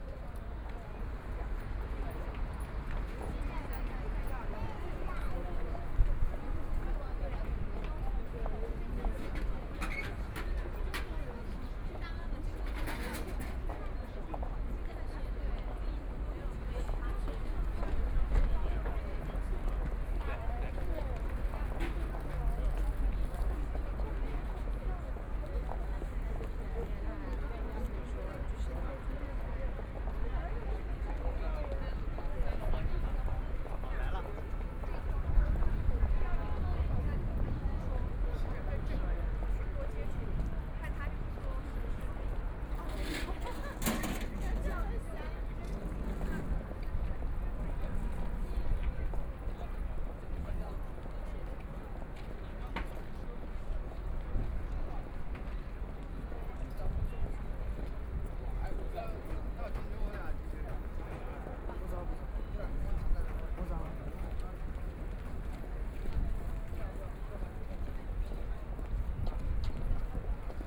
Footsteps, Very large number of tourists to and from after, Binaural recording, Zoom H6+ Soundman OKM II